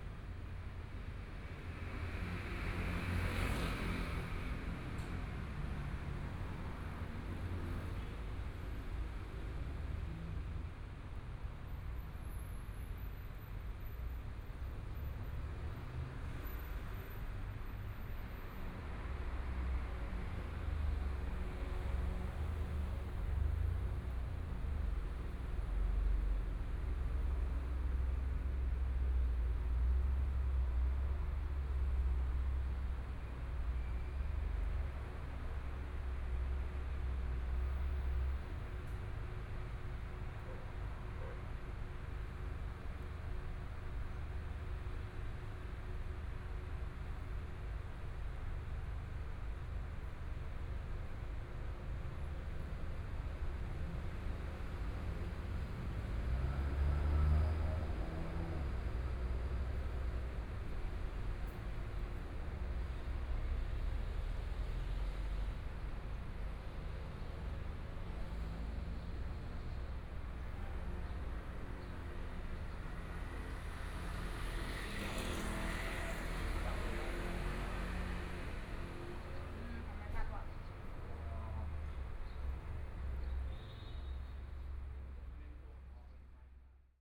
Sec., Xinsheng N. Rd., Zhongshan Dist. - Intersection corner
Intersection corner, Environmental sounds, Traffic Sound, Binaural recordings, Zoom H4n+ Soundman OKM II
Zhongshan District, Taipei City, Taiwan, February 2014